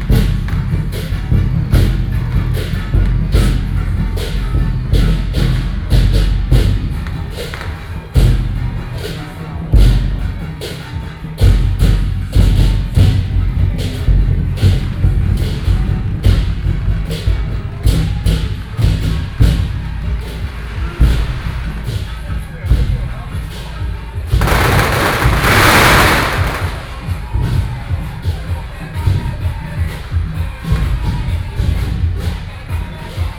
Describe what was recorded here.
Traditional temple festivals, Binaural recordings, Sony PCM D50 + Soundman OKM II, ( Sound and Taiwan - Taiwan SoundMap project / SoundMap20121115-8 )